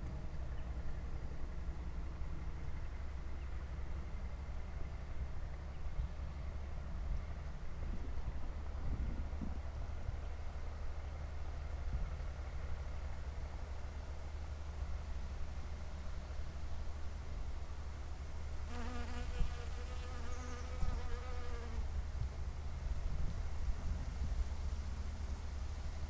Radojewo, forest road parallel to Warta river - magpies
magpies having an argument high in the trees. their screams reverberate in the nearby forest. (sony d50)